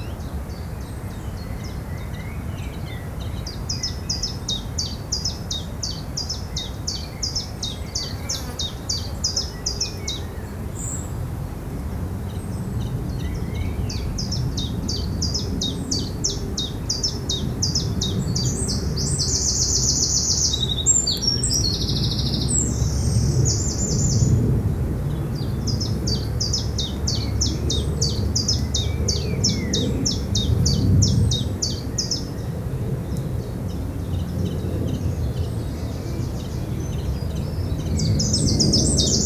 ST Léonard
a little path surrounded by trees, birds and insects.